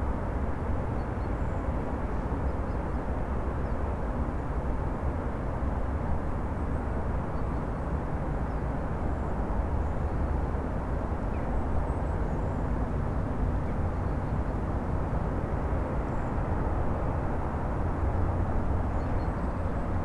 Lithuania, Vilnius, cityscape in green area
Vilnius district municipality, Lithuania, 6 November